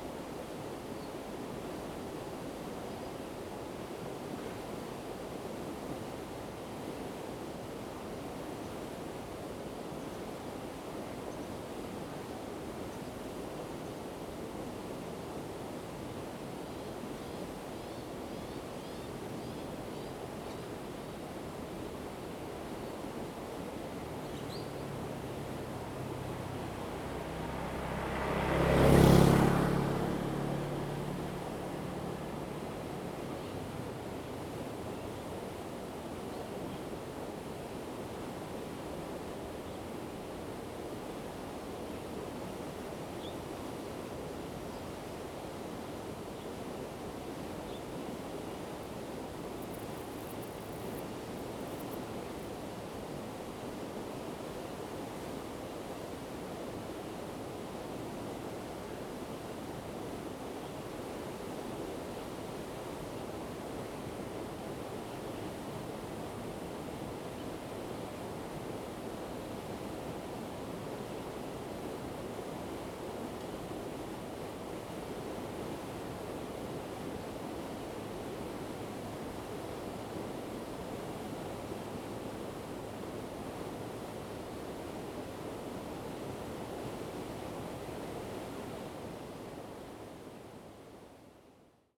福興村, Ji'an Township - Next to farmland
Next to farmland, Dogs barking, The sound of water streams, The weather is very hot
Zoom H2n MS+ XY